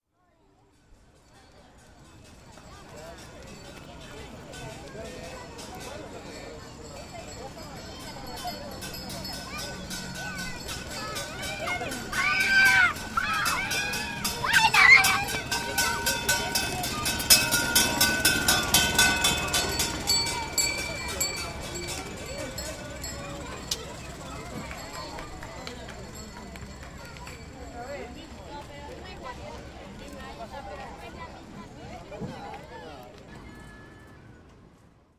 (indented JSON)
{
  "title": "Rincon de los Ninos, Montevideo, Uruguay - las campanas del tren",
  "date": "2011-03-19 17:50:00",
  "description": "the childrens train is aproaching and all the children ring their bell",
  "latitude": "-34.91",
  "longitude": "-56.16",
  "altitude": "19",
  "timezone": "America/Montevideo"
}